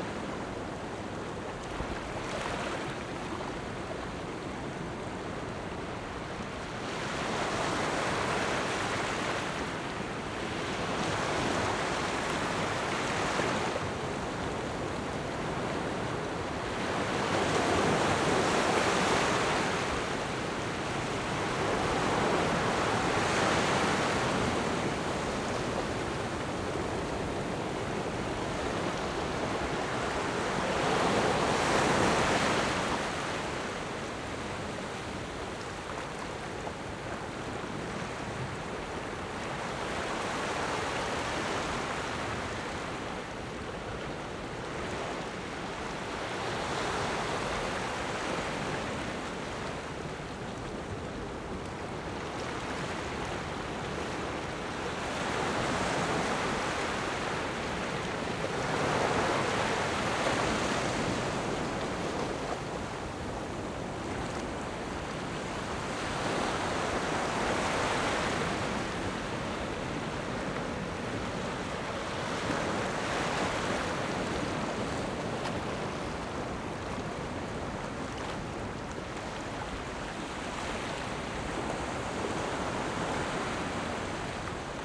Small pier, Wave
Zoom H6 +Rode NT4